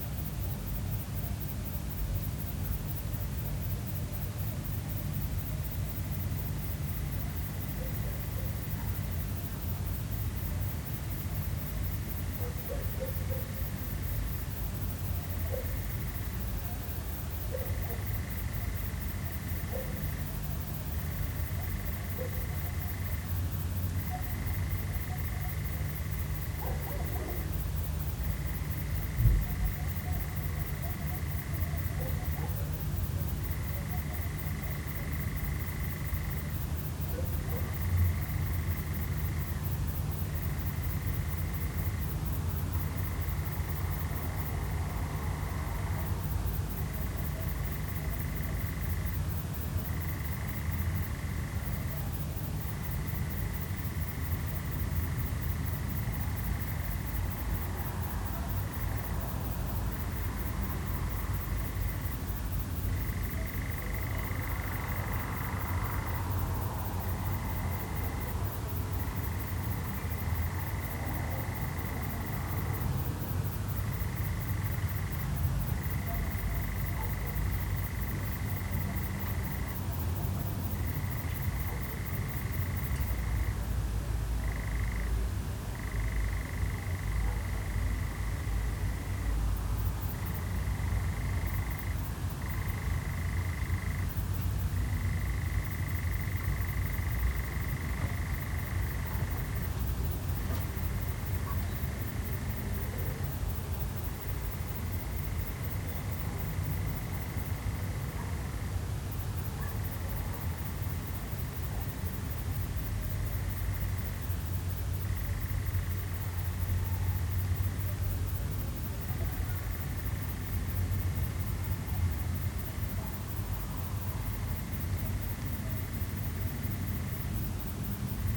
SBG, Cal Xico - Madrugada
Noche de luna llena. Sensación de calma. El perro del vecino se mantiene despierto, gruñiendo de rato a rato. El fondo lo ponen las cigarras y otros insectos nocturnos, que se mezclan con diversos sonidos distantes, apenas discernibles, provenientes de todas direcciones: ladridos, cencerros, aves nocturnas, tráfico, algunas voces...
St Bartomeu del Grau, Spain